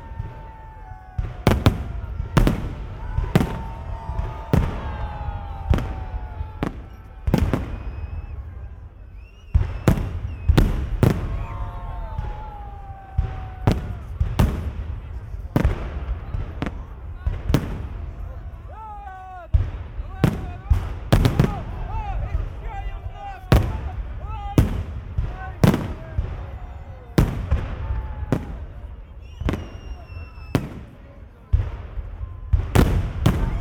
New Year's fireworks, Severodvinsk, Russia - New Year's fireworks
New Year's fireworks.
Arkhangelsk Oblast, Russia, 1 January 2014, 02:10